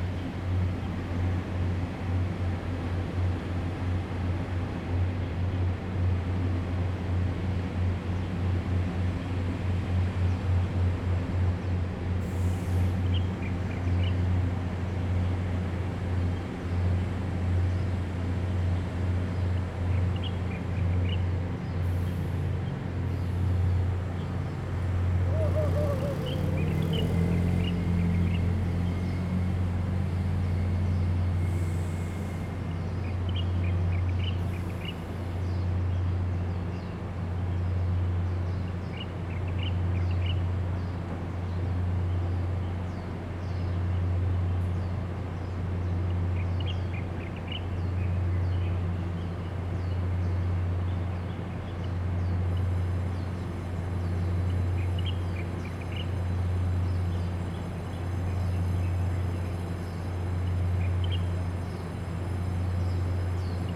Zhiben Station, Taitung City - Next to the station
Next to the station, Birdsong, Traffic Sound, The weather is very hot
Zoom H2n MS +XY
Taitung City, Taitung County, Taiwan, 4 September 2014